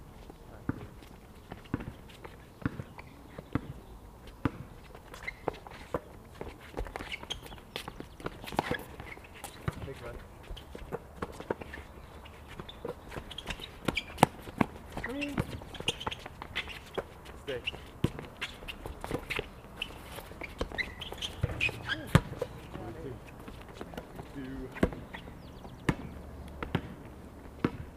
and now, finishing up at PSP, a game of 4 on 4 develops.